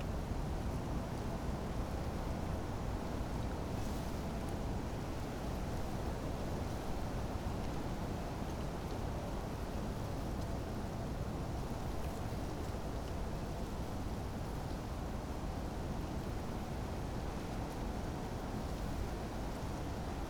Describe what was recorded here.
a cold wind from south-west in dry gras and a group of poplar trees, (PCM D50)